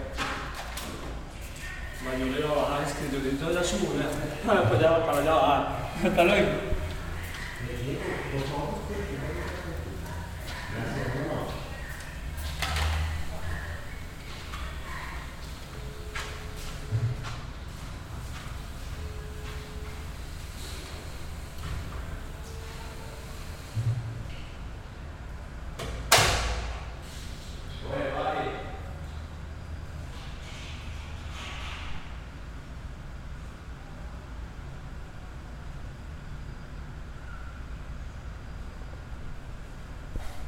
Cra., Medellín, Belén, Medellín, Antioquia, Colombia - Convesaciones inseperadas
Este pasillo misterioso se encuentra mojado porque está cerca a una piscina, de allí se pueden
analizar las pisadas de aquellos que han osado en adentrarse en el recóndito y frío pasillo a las
4:00 pm
Valle de Aburrá, Antioquia, Colombia, 4 September 2022, 16:50